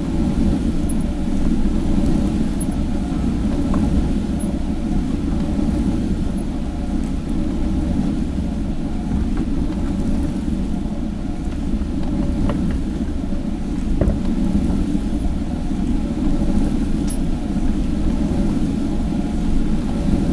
old grain mill in Panelia: john grzinich - panelia mill grinding wheel
close up recording of the functioning grinding wheel at work inside the panelia village grain mill